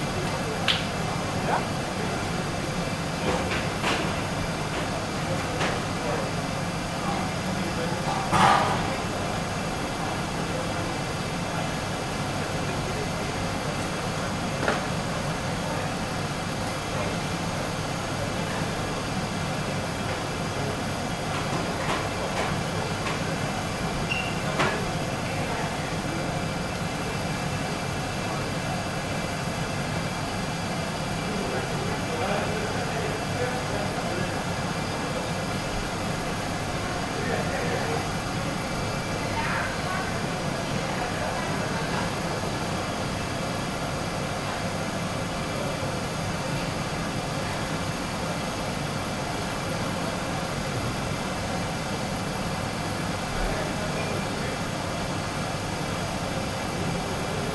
two large pots filled with broth and game on gas burners. the chef talking with friends while stirring the dish.
29 September 2012, ~16:00